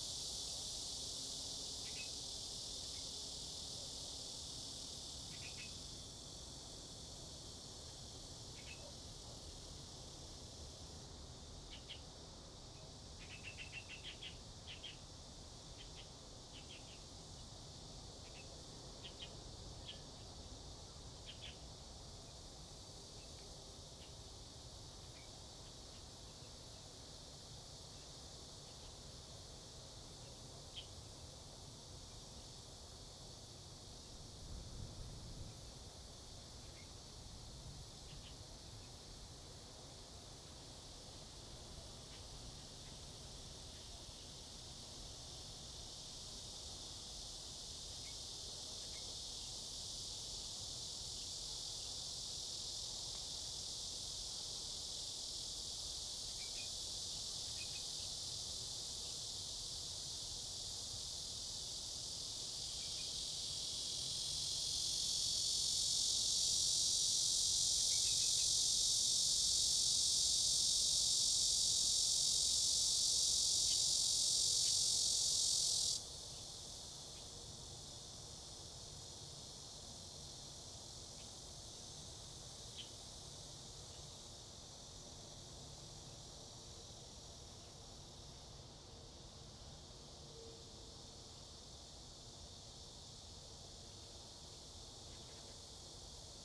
大牛稠, Daniuchou, Dayuan Dist. - The plane took off
Cicada cry, Bird call, Dog sounds, Near the airport runway, The plane took off
Zoom H2n MS+XY